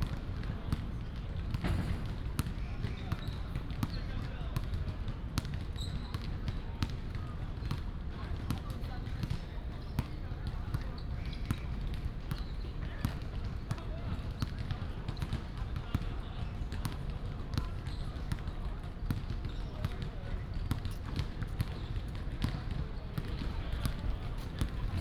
Banyan Ave., National Taiwan University - Bird and Basketball sounds
At the university, Next to the stadium, Chirp, Bicycle sound, Basketball court